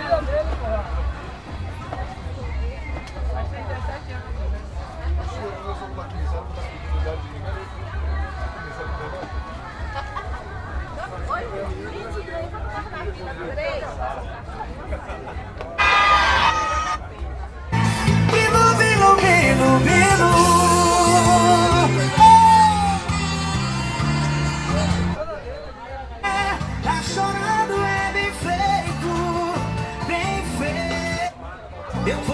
Na feira, cada barraca de cds escuta sua música, e testa seus dvds.
In the free market, each sailesman testing a different cd.